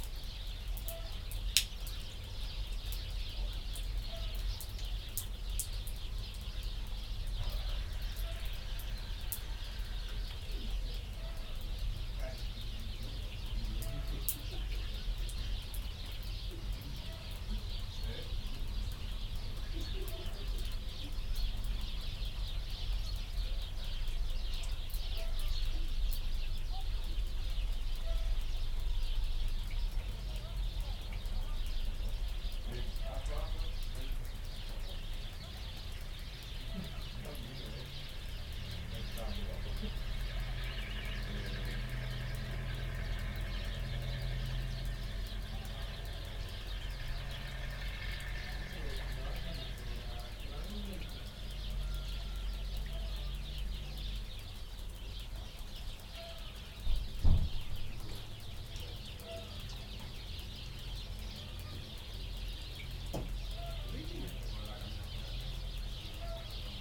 Morning, it snowed and the wolves are hauling.